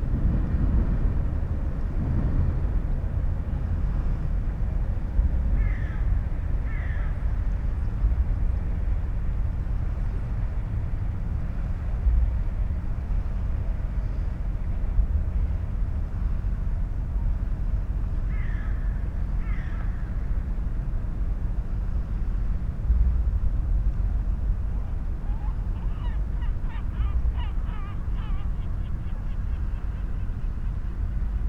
Molo, Punto Franco Nord, Trieste, Italy - train nearby